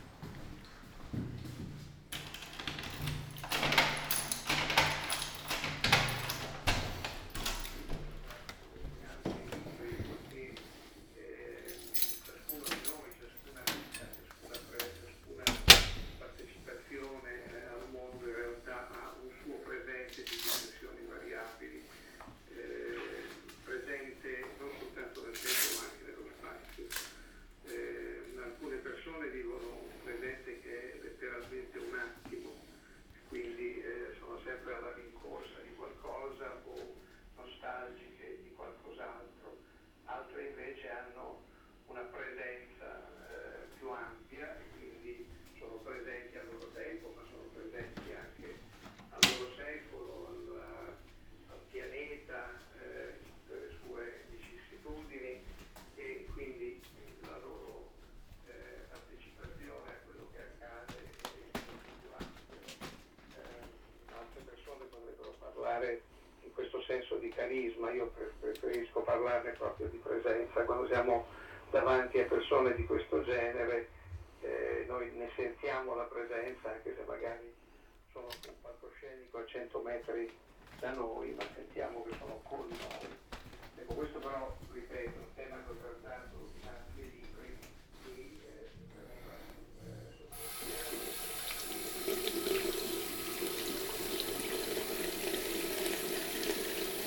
Ascolto il tuo cuore, città. I listen to your heart, city. Several chapters **SCROLL DOWN FOR ALL RECORDINGS ** - Morning (far) walk AR-II with break in the time of COVID19 Soundwalk
"Morning (far) walk AR-II with break in the time of COVID19" Soundwalk
Chapter CXVIII of Ascolto il tuo cuore, città. I listen to your heart, city
Friday, August 14th, 2020. Walk to a (former borderline far) destination; five months and four days after the first soundwalk (March 10th) during the night of closure by the law of all the public places due to the epidemic of COVID19.
Round trip where the two audio files are joined in a single file separated by a silence of 7 seconds.
first path: beginning at 10:51 a.m. end at 11:16 a.m., duration 25’02”
second path: beginning at 03:27 p.m. end al 03:54 p.m., duration 27’29”
Total duration of recording 00:52:38
As binaural recording is suggested headphones listening.
Both paths are associated with synchronized GPS track recorded in the (kmz, kml, gpx) files downloadable here:
first path:
second path:
Go to Chapter LX, Wednesday, April 29th 2020 and Chapter CXVIII, Thursday July 16th 2020: same path and similar hours.